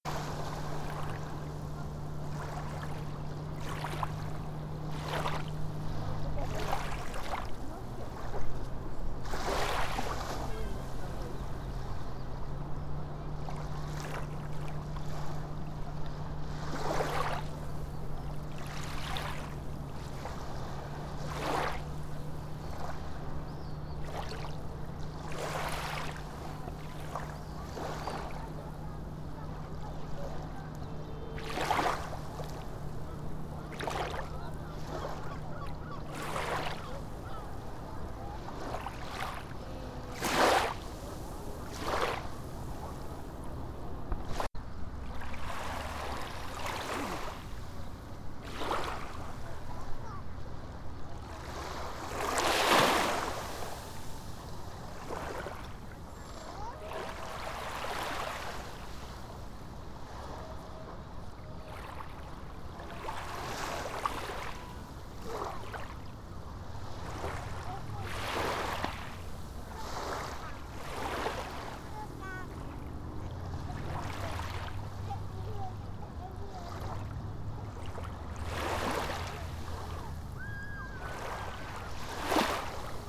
playa de ceuta, cerca de calle del veintiocho
playa beach vceuta africa spain espana waves wellen olas gente people menschen atmo
Ceuta, Spain, 16 July 2010, ~14:00